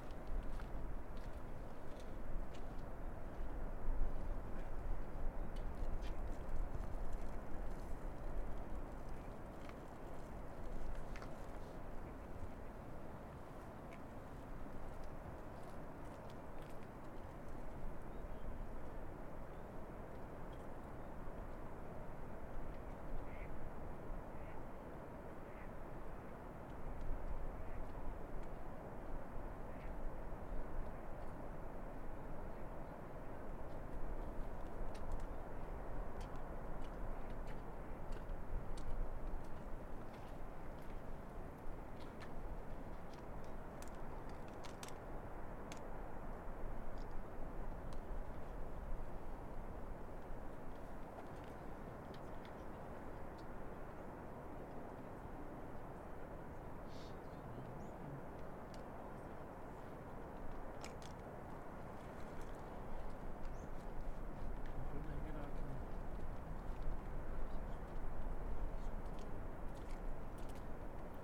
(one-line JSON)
{"title": "Partenen, Partenen, Österreich - Stausee kops", "date": "2019-06-03 11:22:00", "description": "Aussenaufnahme; Schritte durch Schneematsch; Rauschen des Stausees.", "latitude": "46.97", "longitude": "10.11", "altitude": "1813", "timezone": "Europe/Vienna"}